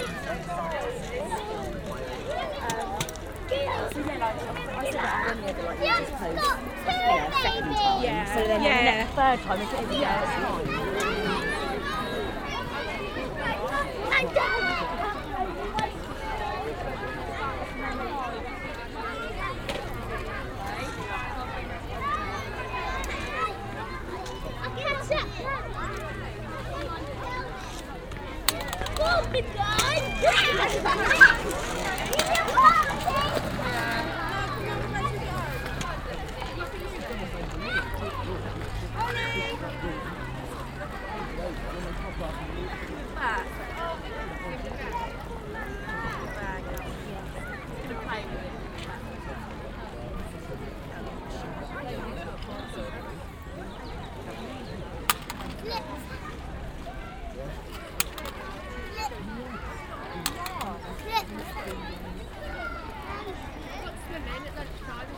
Reading, Primary school.
End of school day. Parents and children in playground.